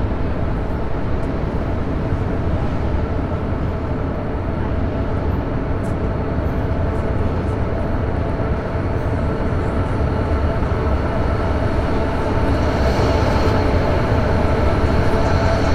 Castellón de la Plana, Castellón, España - Enrique Salom. Train Arrival
Train arrival at Sub Station
1 April 2015, 10:30